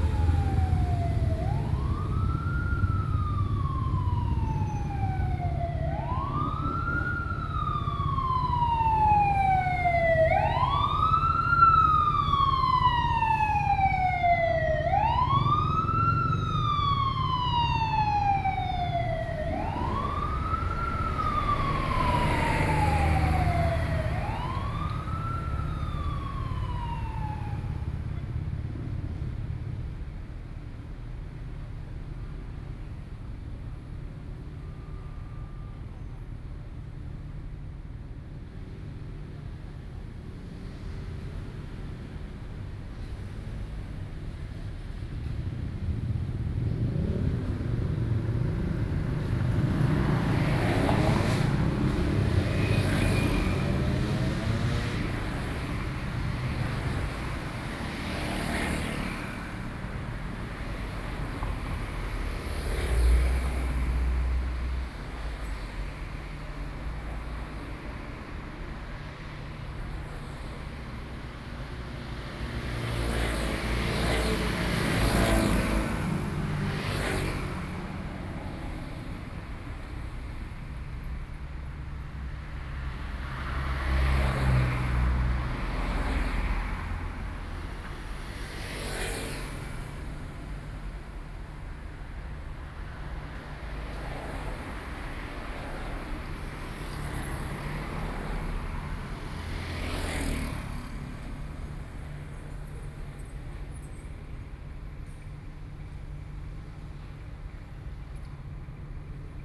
Beitou, Taipei - Traffic
Traffic, Sitting on the ground, Sony PCM D50 + Soundman OKM II